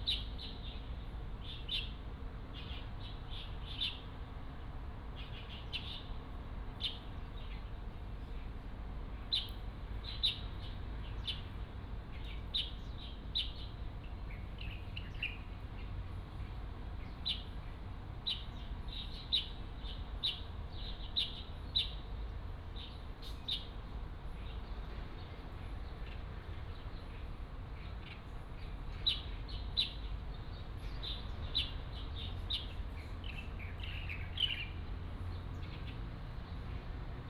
The sound of birds, in the park